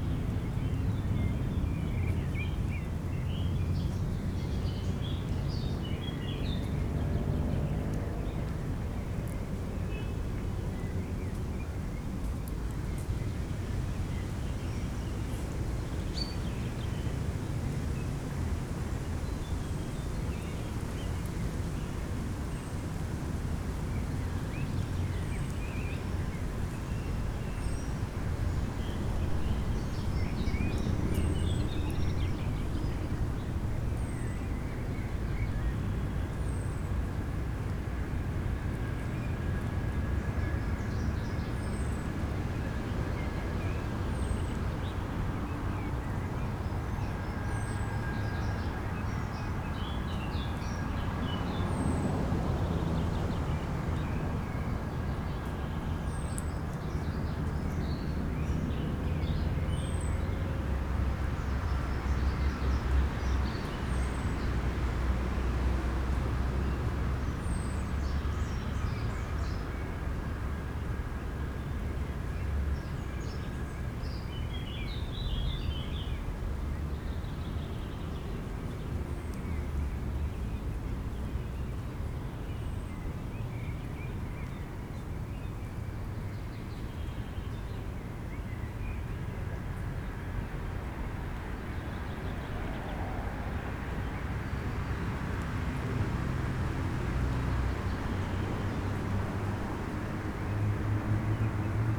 long grass rustling in the wind, birds, sound of cars and motorcycles from the nearby road
the city, the country & me: may 8, 2011
Germany, 8 May 2011, 10:35